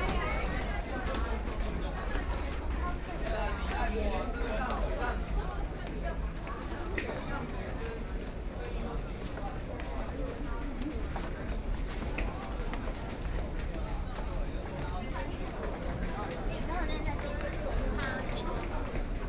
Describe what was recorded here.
from Chiang Kai-shek Memorial Hall Station to Ximen Station, Binaural recordings, Sony PCM D50 + Soundman OKM II